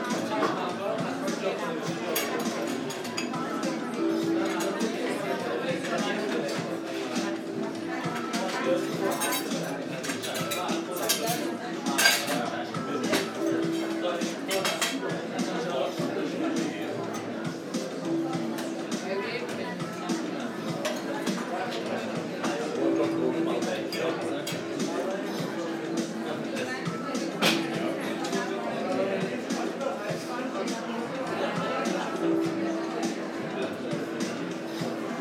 Indoor ambience of a cafe in north of Tehran